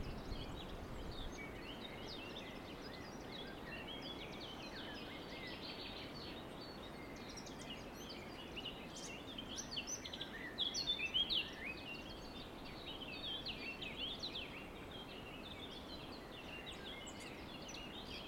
Halasz Csarda - Birds near the river
Birds singing in the forest near the river Drava. Cyclist and people with a small child passing by on the trail between the forest and the river. Recorded with Zoom H2n (XY, gain on 10, on a small tripod) placed on a wooden ornithological observatory.
5 April 2021, ~14:00